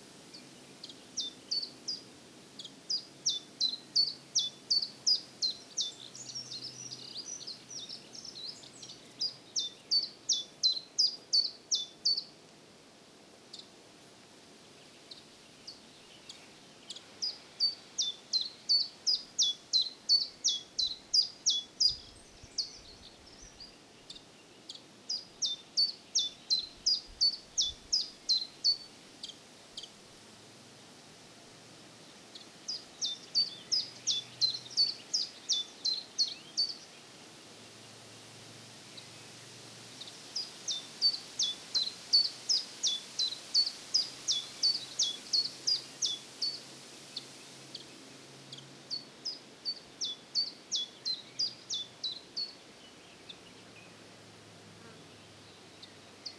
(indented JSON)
{
  "title": "Horní Orlice, Červená Voda, Česká republika - ptáčkové v lese",
  "date": "2013-07-01 15:30:00",
  "latitude": "50.06",
  "longitude": "16.80",
  "altitude": "786",
  "timezone": "Europe/Prague"
}